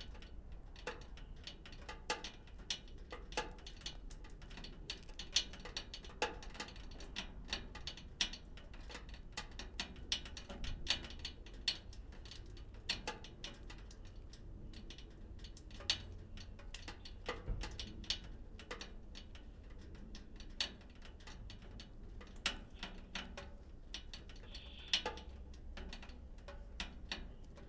Sint-Jans-Molenbeek, Belgium - Sonorous drainpipe with snow melting in it
This sound is produced by a large fixture halfway down a thick and leaky drainpipe on an old building. It snowed the day before this sound was heard, so perhaps it is produced by something melting slowly further up the pipe? The recording was made by placing the EDIROL R09 on a ledge part-way up the pipe. A very slight high-pitched/treble-heavy trasmitted static sound is coming from further up the street, where there was a broken intercom.